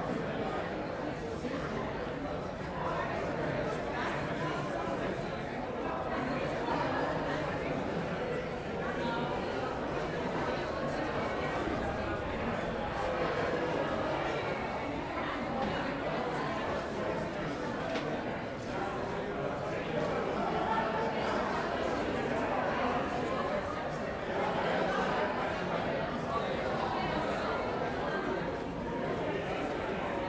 Kortenbos, Centrum, Nederland - Newlyweds drive off.
Wedding in the Theresia van Ávila church - Friends and family of the newlyweds are waiting outside. The couple comes out of the church and get into a decorated Beetle and drive off.
Zoom H2 recorder with SP-TFB-2 binaural microphones.